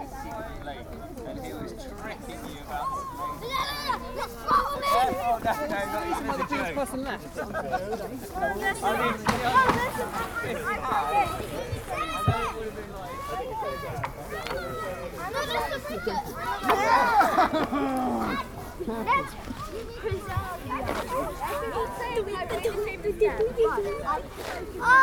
Viaduct Pond, Hampstead, London - Frozen Pond
Hampstead Heath frozen pond, kids playing with the ice, breaking ice, ice sounds, pulling a dog from the water
Greater London, England, United Kingdom, 13 February 2021